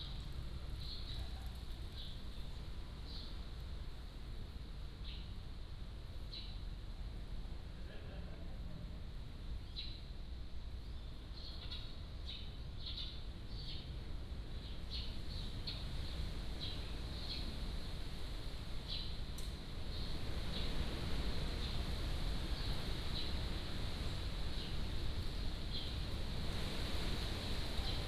東安宮, Magong City - In the temple
In the temple, Birds singing, Wind